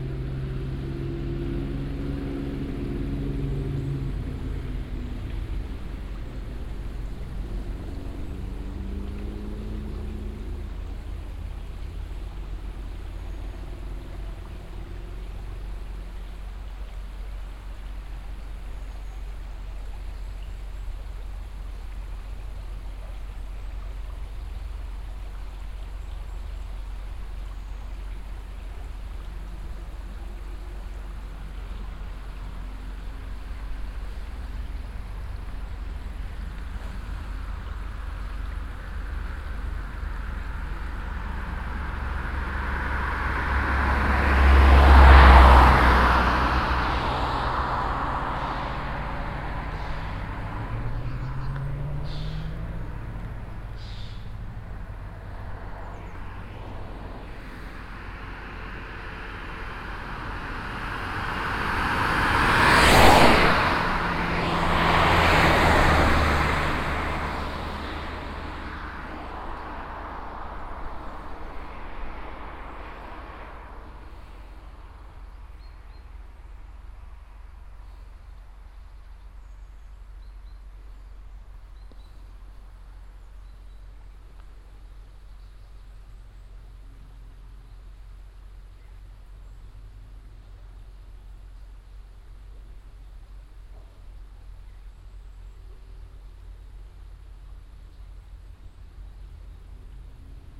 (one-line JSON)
{"title": "road to dasbourg, haaptstrooss, traffic", "date": "2011-09-17 19:17:00", "description": "on the road to Dasbourg at a parking lot. The sound of the river Our and traffic echoing in the valley. Passing by on the street some cars and two motorbikes.\nStraße nach Dasburg, Haaptstrooss, Verkehr\nAuf der Straße nach Dasburg auf einem Parkplatz. Das Geräusch vom Fluss Our und von Verkehr, der im Tal widerhallt. Auf der Straße fahren einige Autos und zwei Motorräder vorbei.\nroute en direction de Dasbourg, trafic\nSur une aire de stationnement de la route en direction de Dasbourg. Le son de la rivière Our et le trafic routier qui se répercute dans la vallée. Dans le fond, on entend des voitures et deux motos sur la route.", "latitude": "50.05", "longitude": "6.13", "altitude": "299", "timezone": "Europe/Luxembourg"}